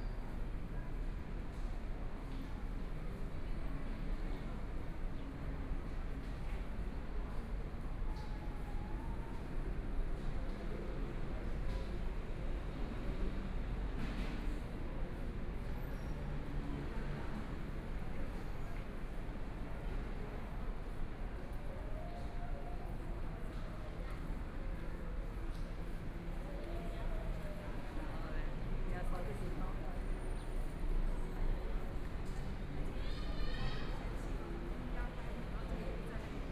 行天宮, Taipei City - walking in the temple
Walking through the temple inside, Binaural recordings, Zoom H4n+ Soundman OKM II
Taipei City, Taiwan, 20 January 2014